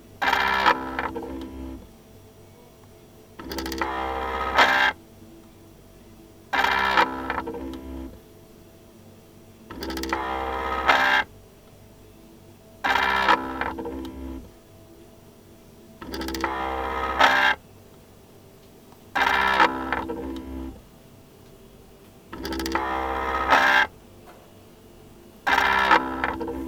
Umeå. Blodcentral department. Incubator
Incubator rotation mechanics.
Umeå Municipality, Sweden, 24 April 2011, 13:51